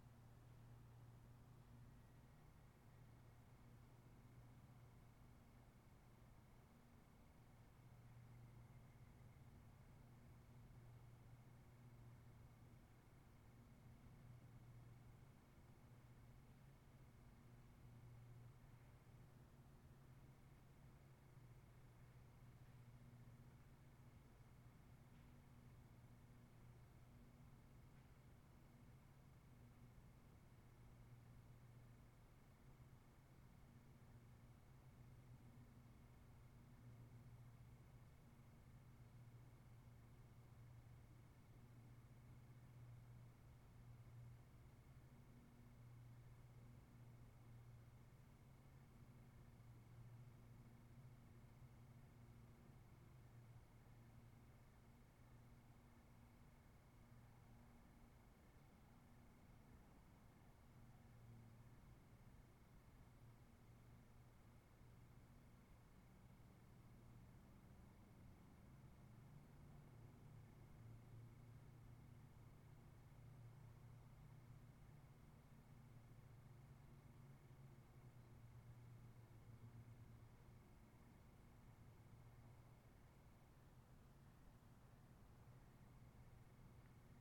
Field in Sandy - Outside Sandy/Elks Bugling
Empty field near dusk on a cool early fall day. Caught some elks running and bugling.